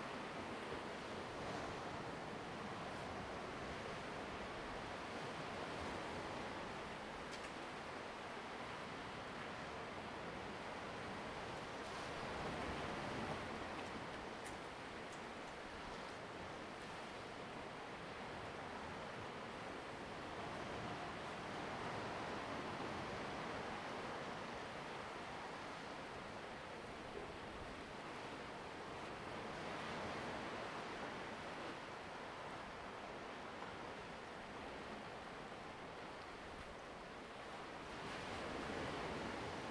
Dabaisha Diving Area, Lüdao Township - On the coast
On the coast, sound of the waves
Zoom H2n MS +XY
Lüdao Township, Taitung County, Taiwan